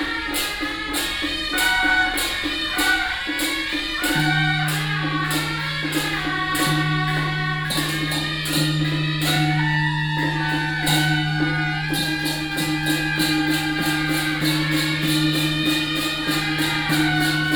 中壢永福宮, Zhongli Dist., Taoyuan City - Din TaoßLeader of the parade
Din TaoßLeader of the parade, Traffic sound, In the square of the temple
中壢區, 桃園市, 臺灣, 10 August 2017